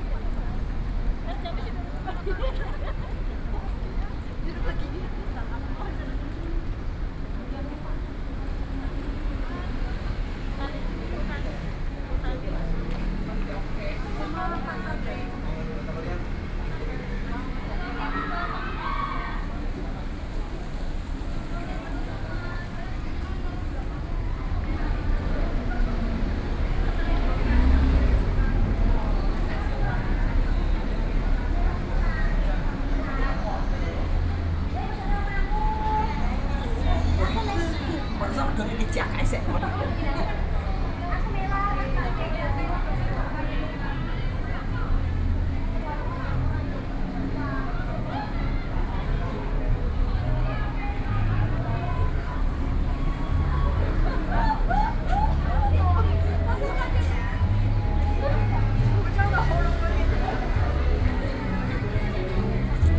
Southeast Asian labor holiday gathering shops and streets, Sony PCM D50 + Soundman OKM II